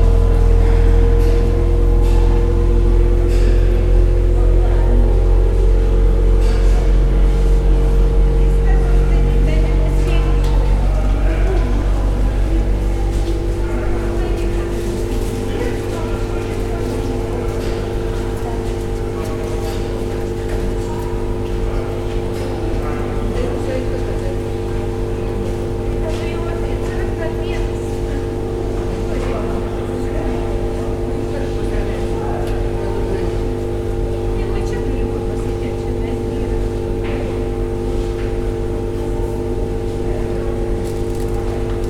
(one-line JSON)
{"title": "Vilnius Bus Station, Geležinkelio g., Vilnius, Lithuania - Bus station waiting hall, near an air conditioner unit", "date": "2019-07-19 12:00:00", "description": "A composite recording. Reverberating waiting hall is captured with stereo microphones, and nearby air conditioner hum is captured with dual contact microphones. Recorded with ZOOM H5.", "latitude": "54.67", "longitude": "25.28", "altitude": "140", "timezone": "Europe/Vilnius"}